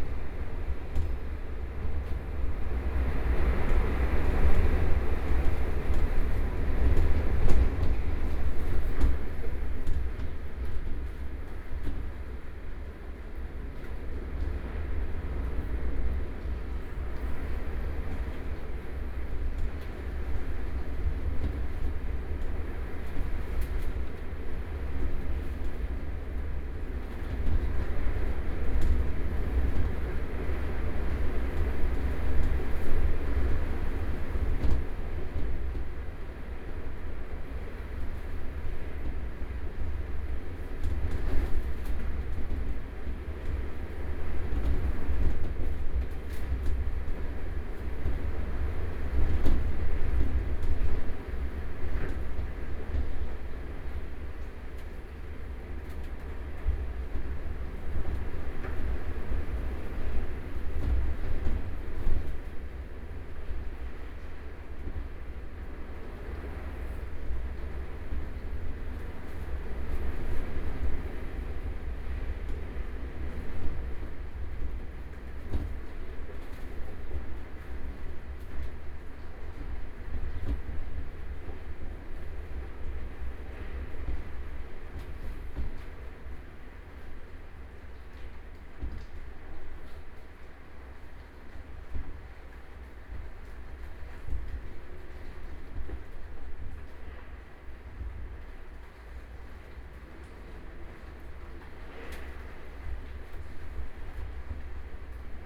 Ln., Sec., Zhongyang N. Rd., Beitou Dist - Typhoon
Strong wind hit the windows, Sony PCM D50 + Soundman OKM II
Beitou District, Taipei City, Taiwan